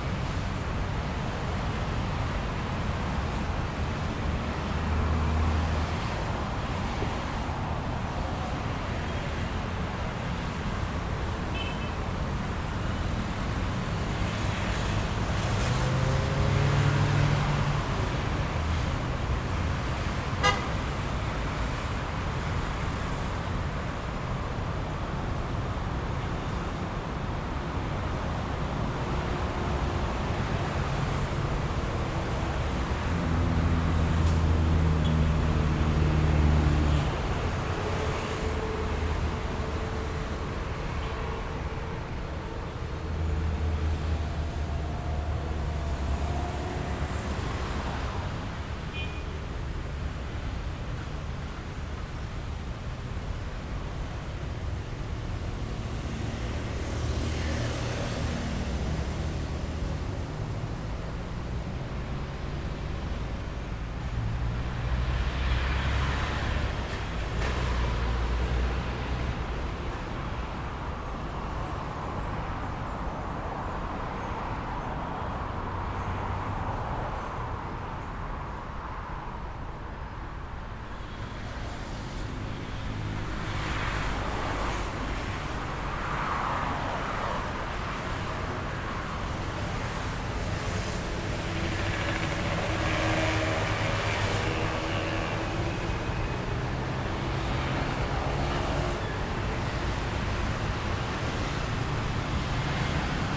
Av. El Poblado, Medellín, El Poblado, Medellín, Antioquia, Colombia - Frente a la bomba de gasolina
En este paisaje se escucha el tráfico denso de la avenida el Poblado
September 2022